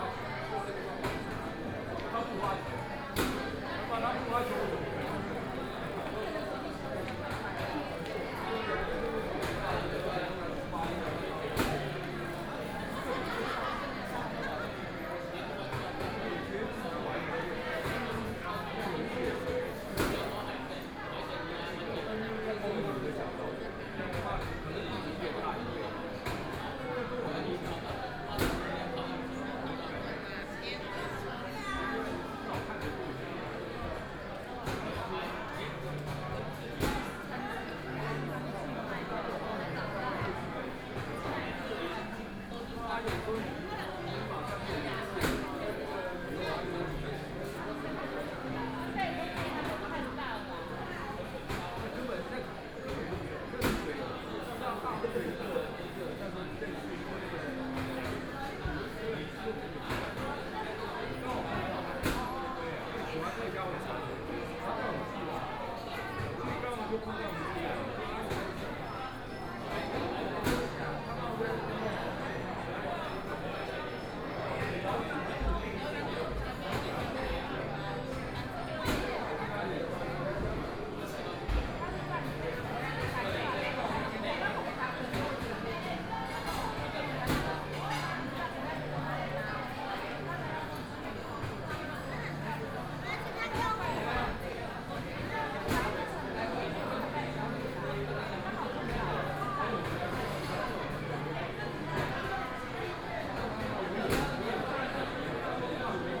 Guanxi Service Area, Hsinchu County - Sitting inside seating area
Sitting inside seating area, Shopping street sounds, The sound of the crowd, walking out of the rest area, Binaural recording, Zoom H6+ Soundman OKM II
Hsinchu County, Guanxi Township